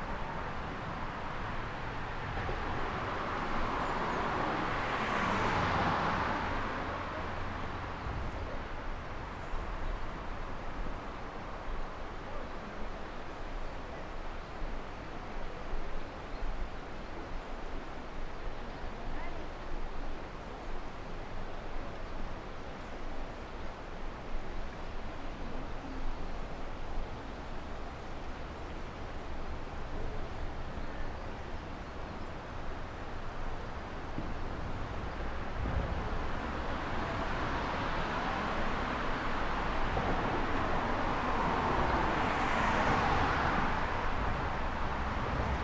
Pont de la Côte de Clermont, Côte de Clermont, Clermont-le-Fort, France - Pont de la Côte
river, bird, walke, r water, kayaker